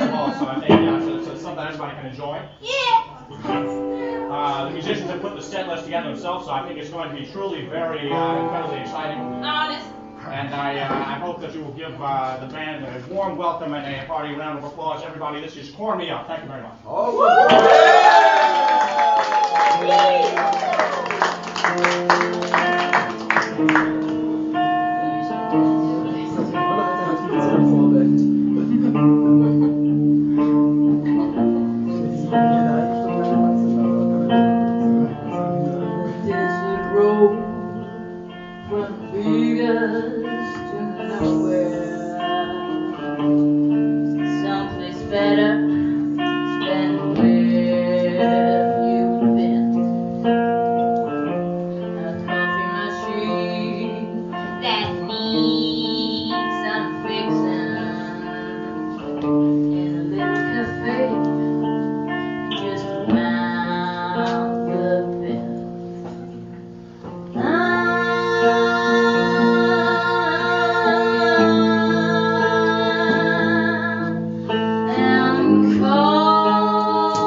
Concert at Der Kanal, Weisestr. 59
As a company to the exhibition of Fred Martin, the fabulous CALL ME UP! are playing their most beloved evergreens. The neighbours don't like it. We do!!!